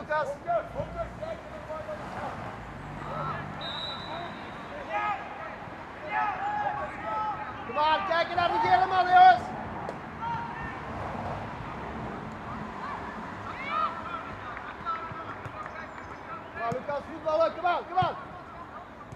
Stade du Heymbos, Jette, Belgique - Football match ambience
Coaches shouting instructions at the young players, busses and cars passing by on the road, distant birds.
Tech Note : Sony PCM-D100 internal microphones, wide position.